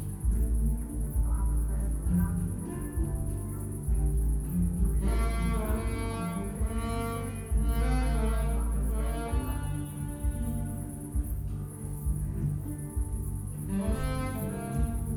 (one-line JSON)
{"title": "Kienitz, Letschin, Kirchencafe - concert, competing sounds", "date": "2015-08-29 21:15:00", "description": "Kienitz, at the river Oder, Oderbruch, Kirchencafe, a nice open air location for concerts and events, competing sounds from a jazz concert (by Manfred Sperling, Papasax and Nikolas Fahy) and the nearby harbour festivities\n(Sony PCM D50, DPA4060)", "latitude": "52.67", "longitude": "14.44", "altitude": "10", "timezone": "Europe/Berlin"}